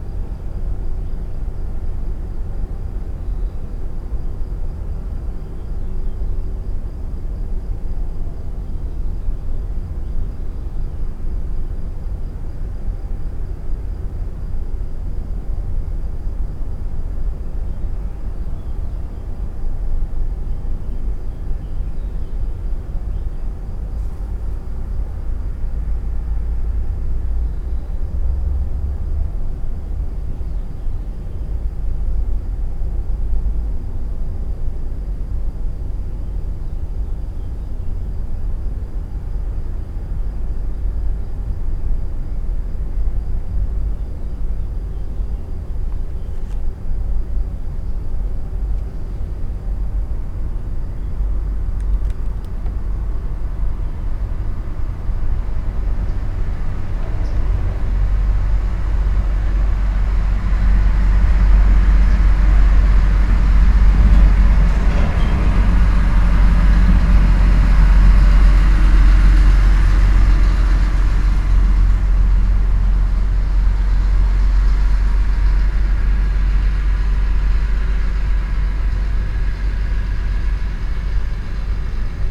Kidricevo, Slovenia - disused factory resonance 2
another vantage point from further down the same passageway. from there the side wall of the factory had already been removed, hence more sounds from outside reached the microphones.